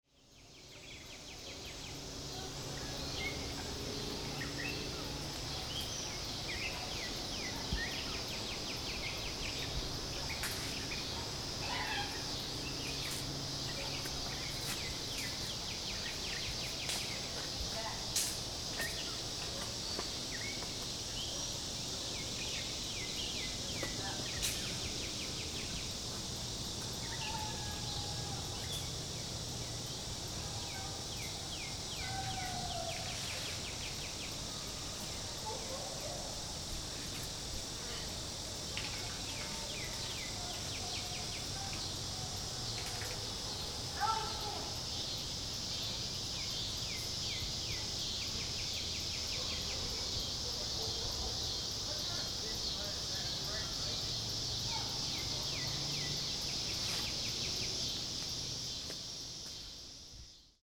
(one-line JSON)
{"title": "Disc Golf Course, Mississinewa Lake State Recreation Area, Peru, IN, USA - Campground sounds, Mississinewa Lake", "date": "2020-07-18 20:40:00", "description": "Sounds heard at the disc golf course, Mississinewa Lake State Recreation Area, Peru, IN 46970, USA. Part of an Indiana Arts in the Parks Soundscape workshop sponsored by the Indiana Arts Commission and the Indiana Department of Natural Resources. #WLD 2020", "latitude": "40.69", "longitude": "-85.95", "altitude": "236", "timezone": "America/Indiana/Indianapolis"}